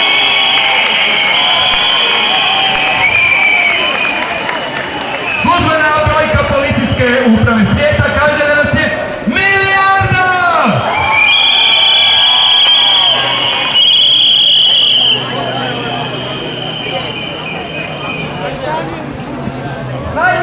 thanking the police for protection, but reproaching them for deminishing the number of participants in official reports;the Un Resolution on the Human Rights of LGBT Persons was accepted the day before
City of Zagreb, Croatia, 18 June, 16:30